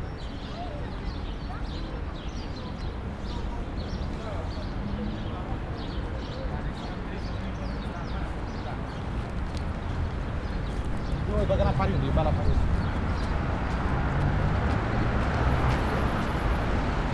Bulevardul Corneliul Coposu, Bucharest
Bulevardul Corneliul Coposu, street
Bucharest, Romania, 22 November, 14:14